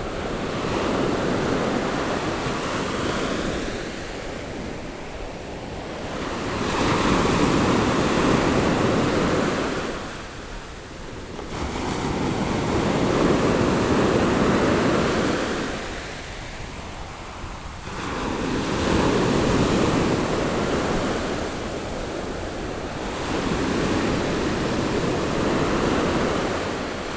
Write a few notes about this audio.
Sound of the waves, The weather is very hot, Zoom H6 MS+Rode NT4